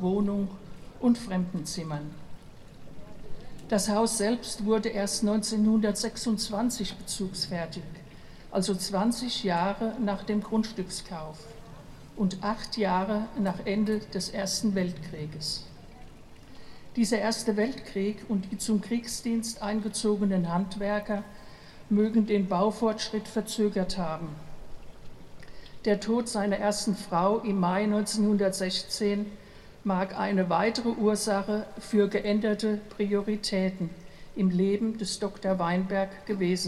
Speeches and Brass Band Pogrom Bad Orb - 2018 Gedenken an das Progrom 1938
Brass band and speeches in front of the former synagoge to commemorate the progrom in 1938 that expelled the jews from the small town Bad Orb, this year with a reflection on the World War One. Part one.
Recorded with DR-44WL.
Solpl. 2, 63619 Bad Orb, Deutschland